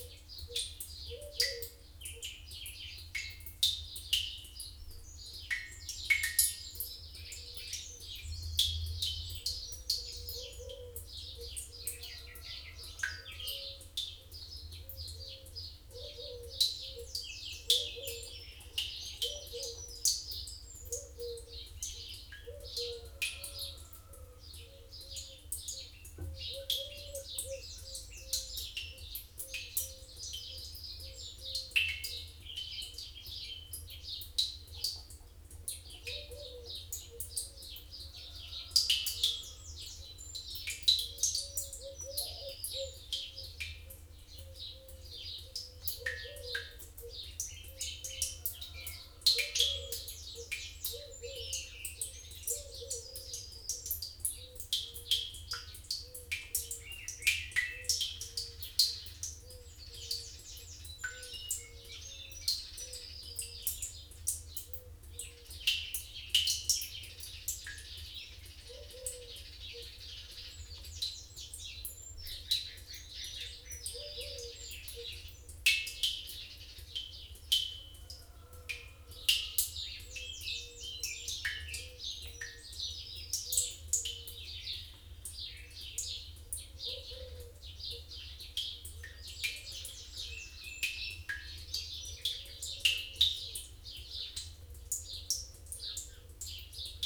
Luttons, UK - water butt suikinkutsu ... sort of ...
Large water butt ... condensation running down a down pipe ... drops into not much water ...had been listening/finding out about suikinkutsu sounds ... lavalier mics used to record ... bird song ... wren ... song thrush ...